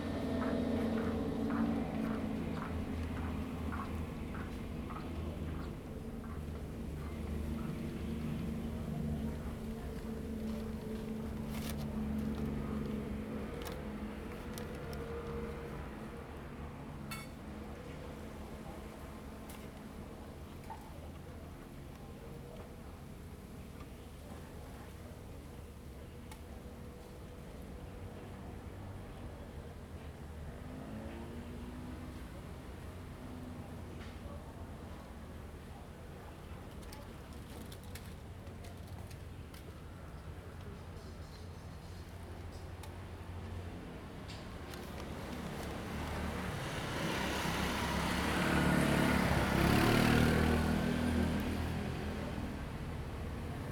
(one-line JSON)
{"title": "碧雲寺, Hsiao Liouciou Island - In the square", "date": "2014-11-01 14:47:00", "description": "In the square in front of the temple, Traffic Sound\nZoom H2n MS+XY", "latitude": "22.34", "longitude": "120.37", "altitude": "38", "timezone": "Asia/Taipei"}